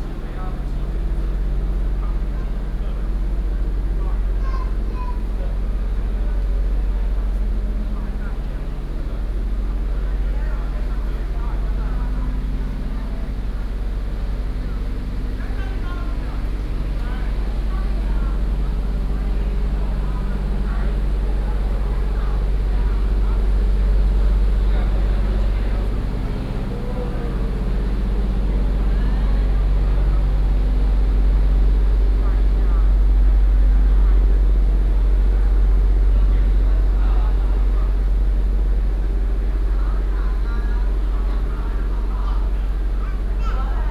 Bisha Fishing Port, Keelung City - Walking in the marina
Walking in the marina
Keelung City, Taiwan, 2 August